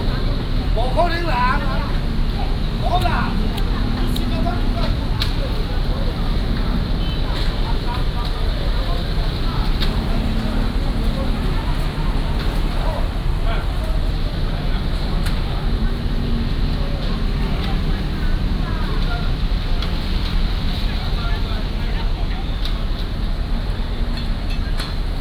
澎湖魚市場, Magong City - Walking in the fish market
Walking in the fish market